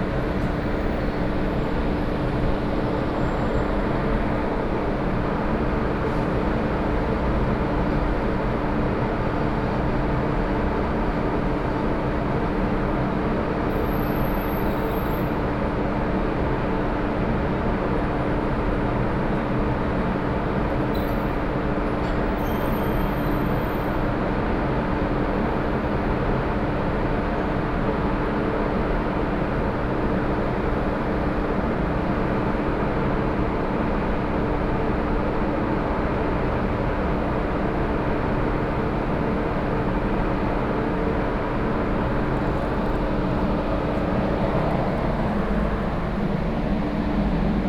Banqiao District, Taiwan - Exhaust air noise
Exhaust air noise, Binaural recordings, Sony PCM D50+ Soundman OKM II